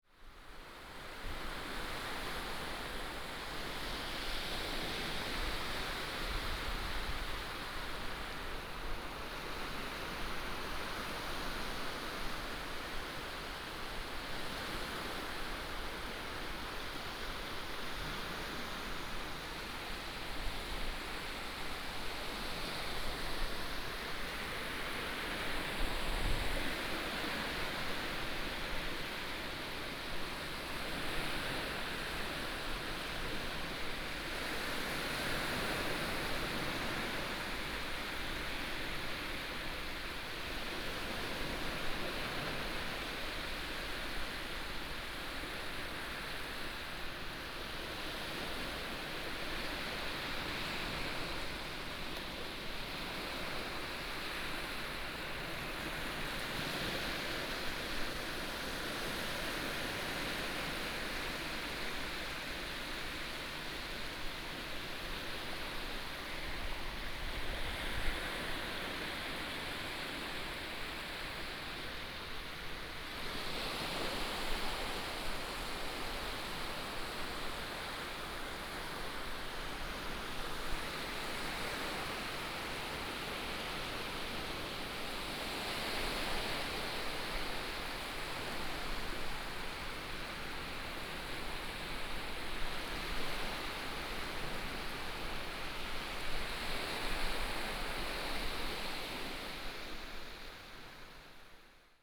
新月沙灣, Zhubei City - High tide time
Beach, Waves, High tide time, Binaural recordings, Sony PCM D100+ Soundman OKM II
21 September, ~10:00